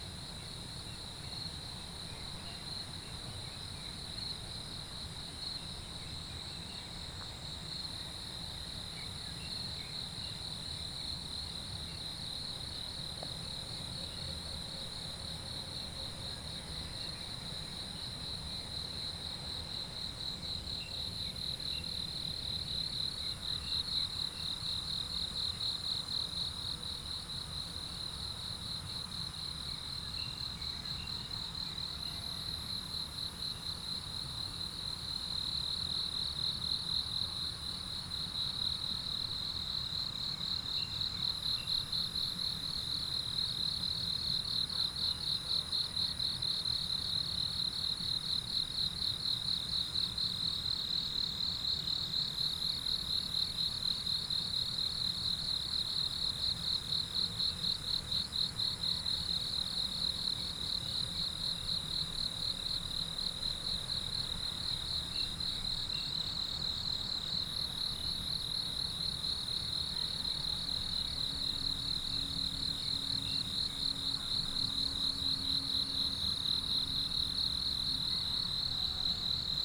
水上巷, 南投桃米里, Taiwan - early morning
early morning, Next to the river, Insects sounds, Chicken sounds
Nantou County, Puli Township, 水上巷